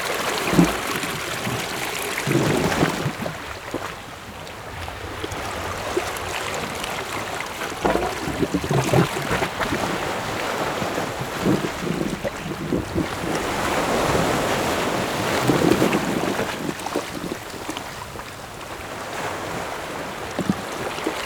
{
  "title": "老梅海岸 Shimen Dist., New Taipei City - The sound of the waves",
  "date": "2012-06-25 14:35:00",
  "latitude": "25.29",
  "longitude": "121.55",
  "timezone": "Asia/Taipei"
}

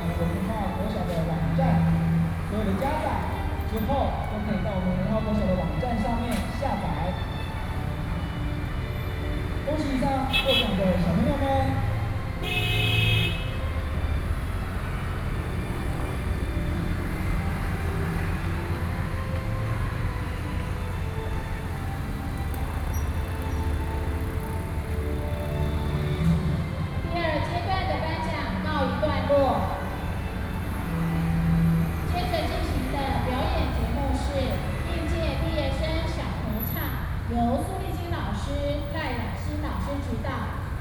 {
  "title": "Beitou, Taipei - Graduation",
  "date": "2013-06-21 19:19:00",
  "description": "Elementary School Graduation, Sony PCM D50 + Soundman OKM II",
  "latitude": "25.14",
  "longitude": "121.50",
  "altitude": "17",
  "timezone": "Asia/Taipei"
}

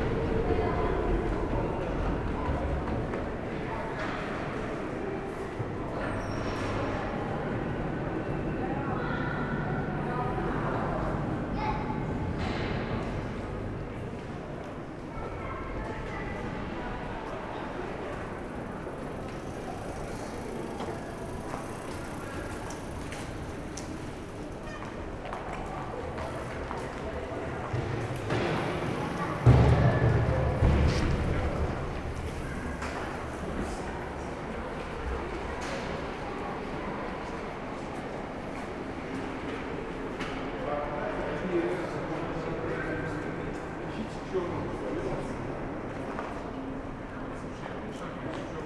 {
  "title": "Sankt Pauli-Elbtunnel, Deutschland, Allemagne - Elbtunnel",
  "date": "2019-04-19 18:00:00",
  "description": "Sankt-Pauli-Elbtunnel. The tunnel establishes the link below the Elb river. The tunnel is mostly cycleable and pedestrian. Sound of the lifts, and crossing all the tunnel by feet.",
  "latitude": "53.54",
  "longitude": "9.97",
  "timezone": "GMT+1"
}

